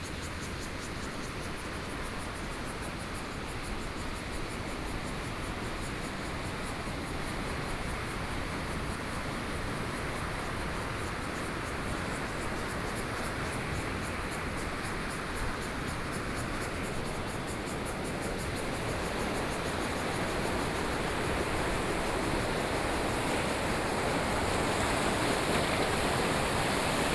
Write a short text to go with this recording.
Walking in the park, Various water sounds, Waterwheel, Cicadas sound